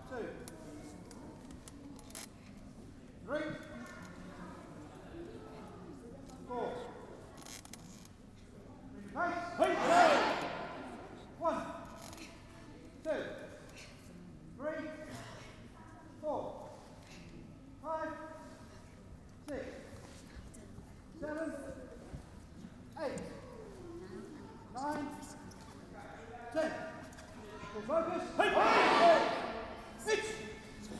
Bradfield, Reading, West Berkshire, UK - Karate Grading Ambient
X-Y ambient recording of those undertaking their Karate gradings at Bradfield College. This section was recorded during the set-piece 'katas' following the instruction of the teacher or 'Sensei'. Recorded using the onboard microphones of the Tascam DR-05.